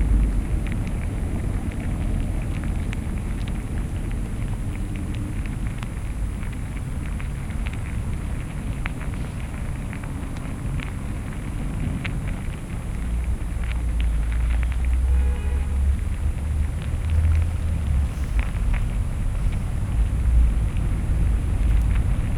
Poznan, Wilda district, yard of closed car school - contact microphones
sounds of ants in their hill. someone had JrF contact microphones plugged into their zoom recorder and left headphones on the ground. recording is made by placing sony d50 mics into one of the earpieces of the headphones. the surrounding sound got picked up anyway. Chris Watson unwittingly cutting into my recording by talking to other workshop participants.